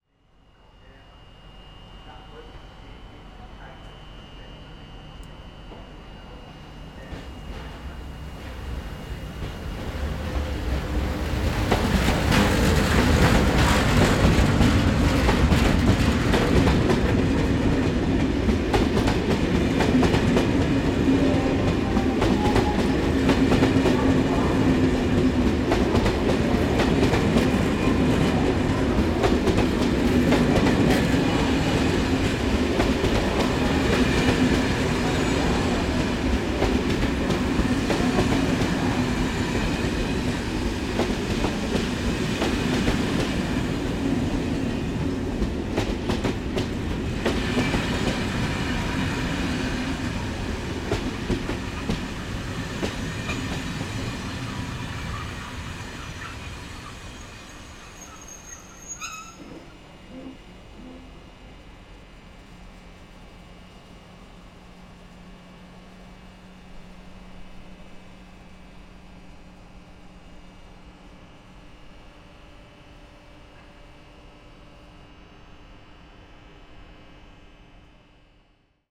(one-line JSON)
{"title": "Bd Pierre Semard, Toulouse, France - a train leaves another arrives", "date": "2022-02-26 12:00:00", "description": "a train leaves another arrives\nCaptation : ZOOM H6", "latitude": "43.61", "longitude": "1.45", "altitude": "147", "timezone": "Europe/Paris"}